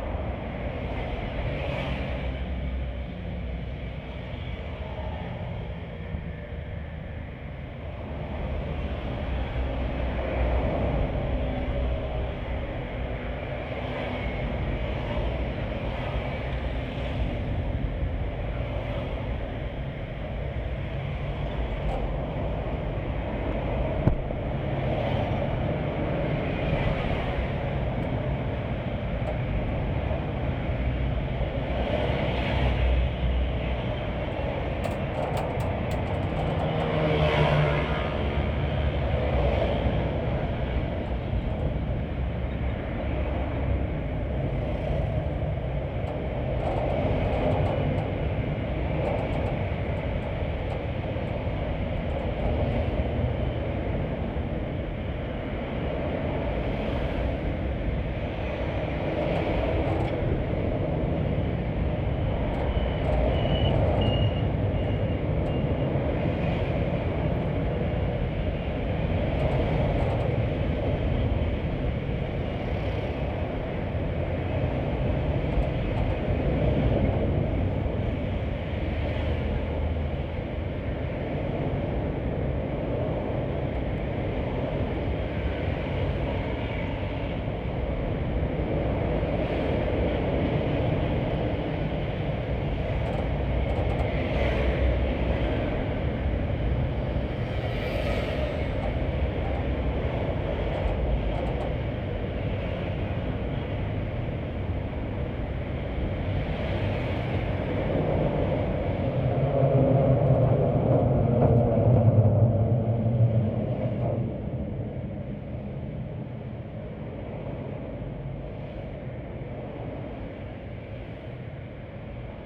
關渡大橋, Tamsui Dist., New Taipei City - Traffic Sound
The Bridge, Traffic Sound
Zoom H4n+Contact Mic